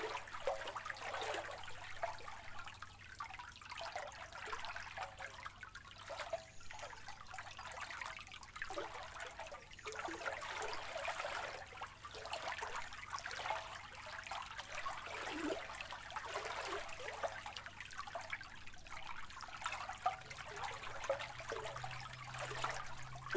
São Miguel-Azores-Portugal, 7 Cidades lake, water on metal gate